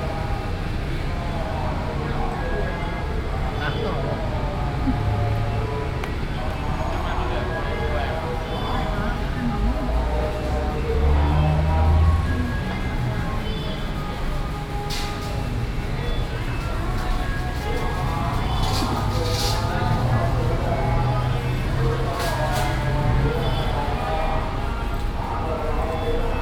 Si Lom, Khwaeng Silom, Khet Bang Rak, Krung Thep Maha Nakhon, Thailand - Hindutempel Sri Maha Mariamman mit Betautomat und Priestern Bangkok
At the Sri Maha Mariamman Hindu temple in Bangkok. Intense atmosphere of hindu believers as well as others seeking support in fertility. There is a permanently looped chant coming from loudspeakers, and there are priests mumbling certain phrases when believers bring offerings to the responsible god.
30 August 2017